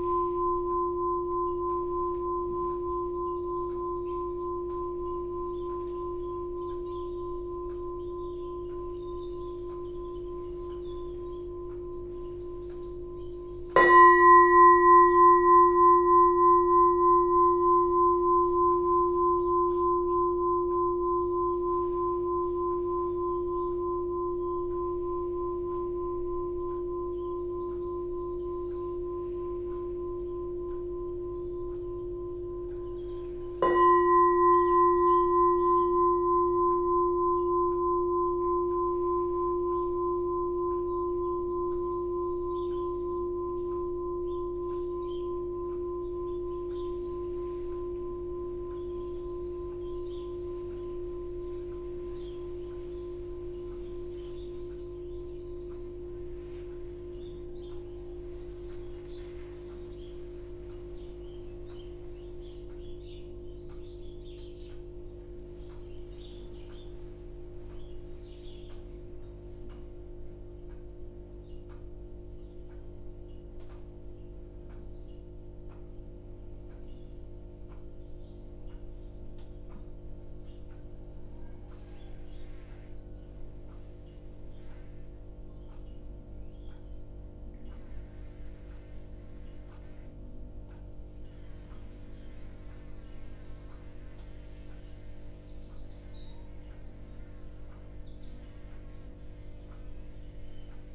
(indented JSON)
{
  "title": "Unnamed Road, Dorchester, UK - Morning Kinh Hanh at New Barn",
  "date": "2017-10-01 07:25:00",
  "description": "Morning walking meditation (Kinh Hanh) for a group of practitioners at New Barn Field Centre in Dorset. This upload captures the movement from sitting meditation to walking meditation and back. The sounds of the bells, practitioners and rustling of clothing are underpinned by the buzz of four electric heaters overhead, the ticking of a clock behind and sounds of planes and birds outside. (Sennheiser 8020s either side of a Jecklin Disk on a SD MixPre6)",
  "latitude": "50.73",
  "longitude": "-2.49",
  "altitude": "115",
  "timezone": "Europe/London"
}